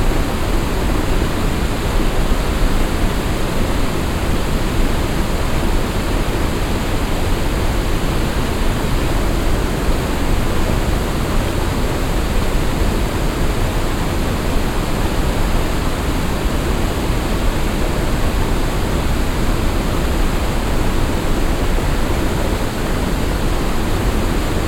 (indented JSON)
{
  "title": "stolzembourg, bridge and small waterfall",
  "date": "2011-08-04 19:00:00",
  "description": "On a bridge that crosses the border river Our. The bridge also functions as a dam and their is a small waterfall on the other side. The sound of the hissing, falling water.\nStolzembourg, Brücke und kleiner Wasserfall\nAuf einer Brücke, die den Grenzfluss Our überquert. Die Brücke funktioniert auch als Damm. Auf der anderen Seite ist ein kleiner Wasserfall. Das Geräusch von rauschendem und fallendem Wasser.\nStolzembourg, pont et petite chute d'eau\nSur un pont qui enjambe la rivière frontalière Our. Le pont fait aussi office de barrage et une petite chute d’eau s’est formée de l’autre côté. Le bruit de l’eau qui chante en tombant.\nProject - Klangraum Our - topographic field recordings, sound objects and social ambiences",
  "latitude": "49.96",
  "longitude": "6.17",
  "altitude": "227",
  "timezone": "Europe/Luxembourg"
}